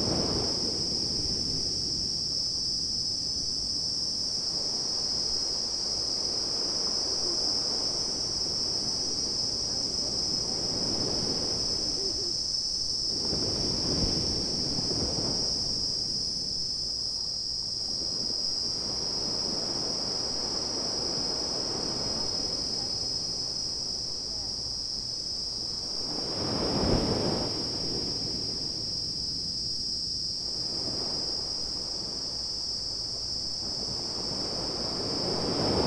Koh Phayam, Thailand - Evening recording outside the bungalow
Beach, cicadas, barking dog, very very relaxed
Ranong, Thailand